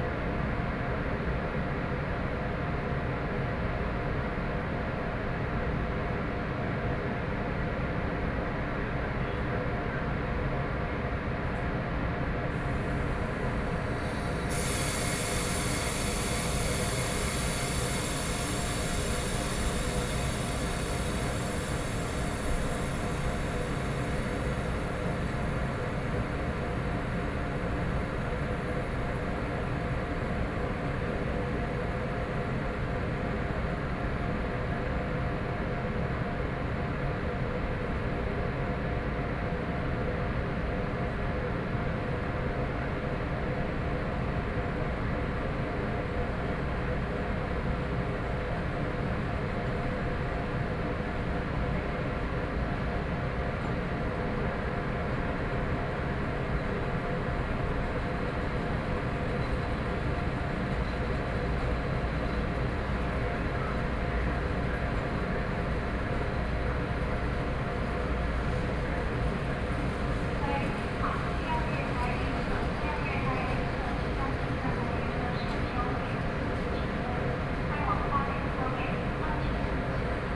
On the platform, Waiting for the train, Station broadcast messages, Binaural recordings, Zoom H4n+ Soundman OKM II